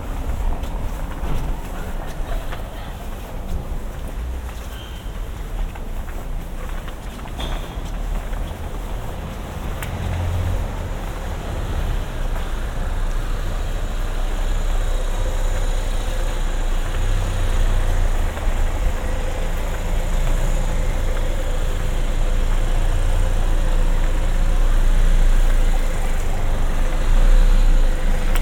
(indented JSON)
{
  "title": "Market square, Grudziądz, Poland - (837b BI) Soundwalk on a market",
  "date": "2021-09-27 19:57:00",
  "description": "An evening soundwalk around a mostly empty market square.\nRecorded with Sennheiser Ambeo binaural headset on an Iphone.",
  "latitude": "53.49",
  "longitude": "18.75",
  "altitude": "37",
  "timezone": "Europe/Warsaw"
}